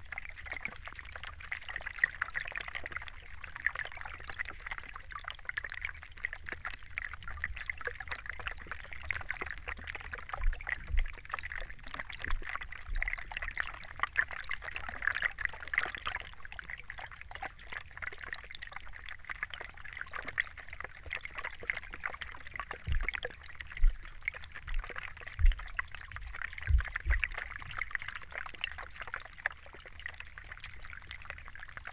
Griūtys, Lithuania, hydrophone under ice
hydrophone laying on some underwater layer of ice
1 March 2019, 15:40